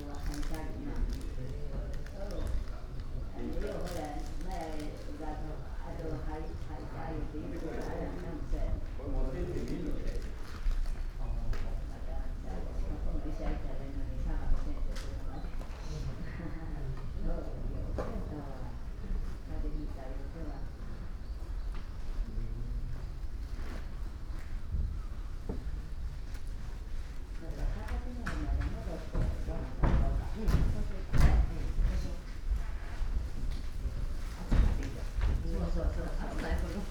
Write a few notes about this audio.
gardens sonority, wooden floor, steps, murmur